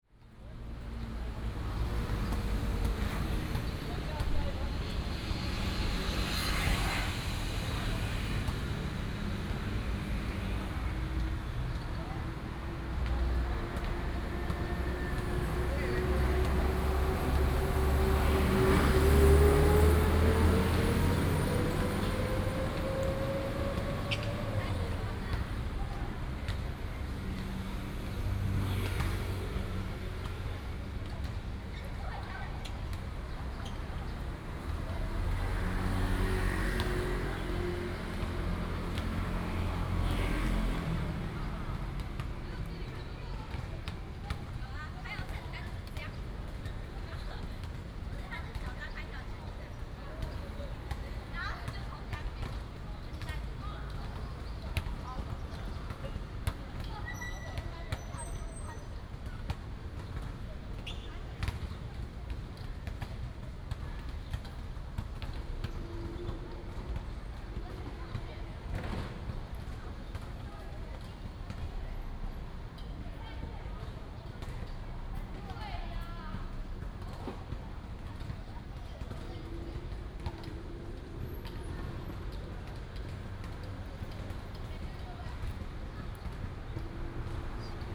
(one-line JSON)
{"title": "Sec., Da’an Rd., Da’an Dist., Taipei City - Sitting next to school", "date": "2015-07-17 09:05:00", "description": "Sitting next to school, Basketball court, Traffic Sound", "latitude": "25.03", "longitude": "121.55", "altitude": "20", "timezone": "Asia/Taipei"}